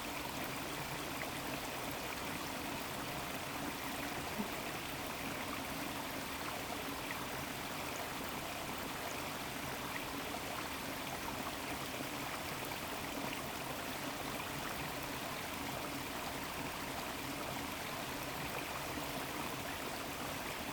Valea Morilor Park, Chișinău, Moldova - The streamflow from the valley of the mills.
The recording of one of the streamflow from the "Valley of the mills" park.
Recorded with a Zoom H6 (SSH-6 mic)